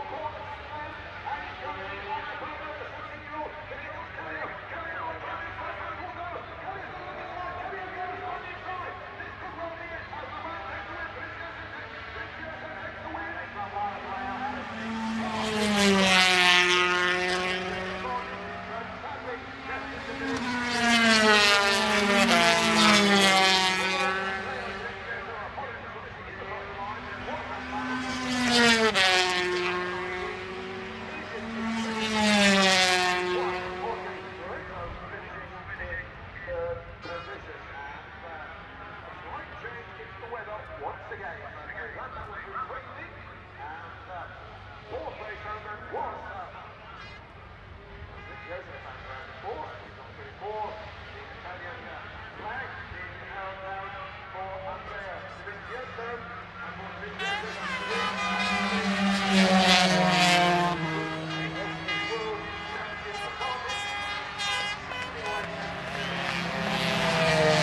Unnamed Road, Derby, UK - British Motorcycle Grand Prix 2004 ... 125 race(contd) ...
British Motorcycle Grand Prix ... 125 race (contd) ... one point stereo mic to minidisk ...
25 July 2004